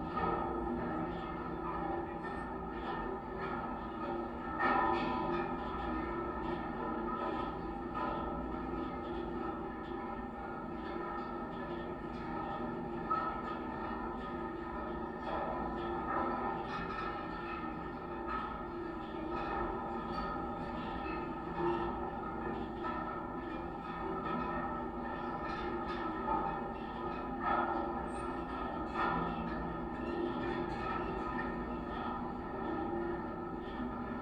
Mindunai, Lithuania, watchtower
highest (36 m) lithuanian public watctower heard through contact mics